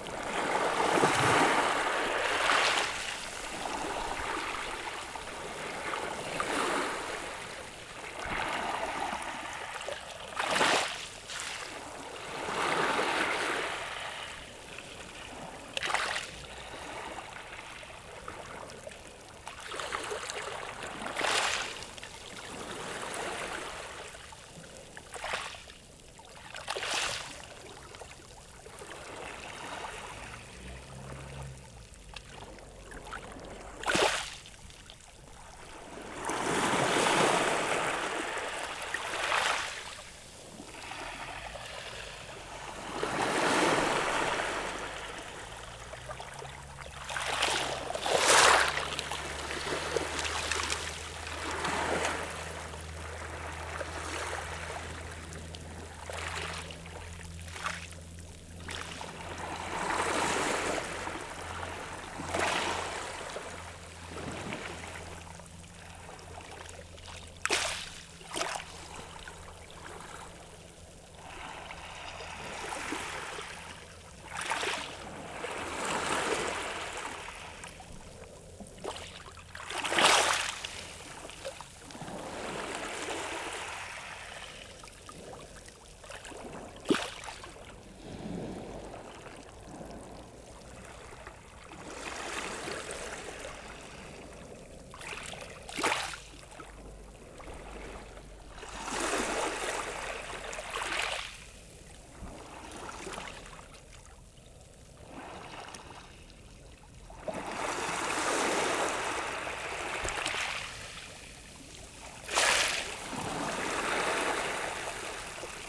Criel-sur-Mer, France - The sea at Criel beach
Sound of the sea, with waves lapping on the pebbles, at the quiet Criel beach.